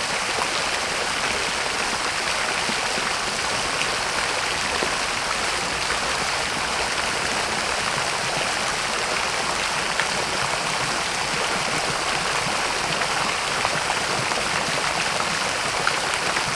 Śródmieście Północne, Warszawa - Fontanna Teatr Lalka
Fontanna Teatr Lalka w Pałac Kultury i Nauki, Warszawa